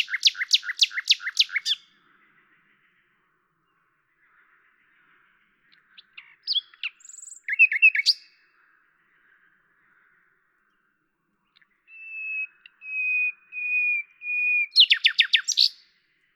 Lavours, France - rossignol à LAVOURS
10/05/1998
Tascam DAP-1 Micro Télingua, Samplitude 5.1